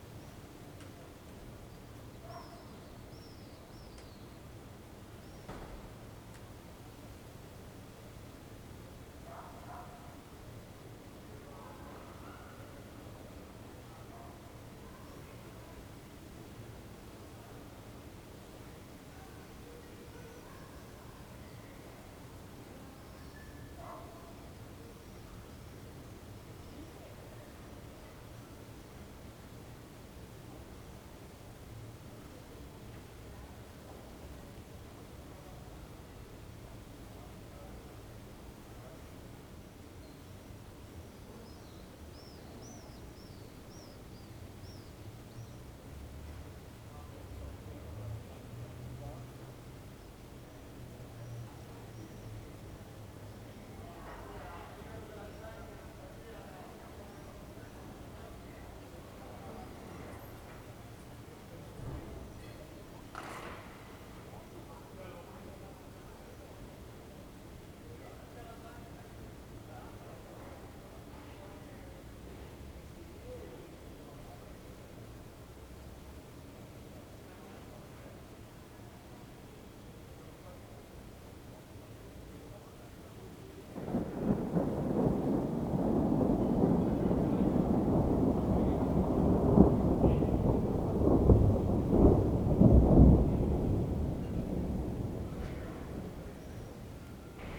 "Evening with storm, dog and rain in the time of COVID19" Soundscape
Chapter LXXVIî of Ascolto il tuo cuore, città. I listen to your heart, city
Friday May 15th 2020. Fixed position on an internal terrace at San Salvario district Turin, sixty six days after (but day twelve of Phase II) emergency disposition due to the epidemic of COVID19.
Start at 8:43 p.m. end at 9:20 p.m. duration of recording 36’53”